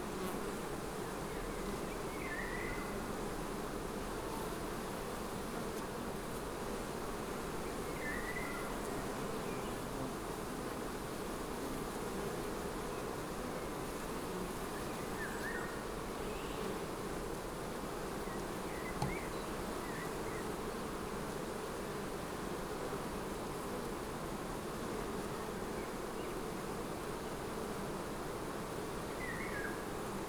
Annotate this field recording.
strange bee-garden found in the wilderness: many beehives made in one carriage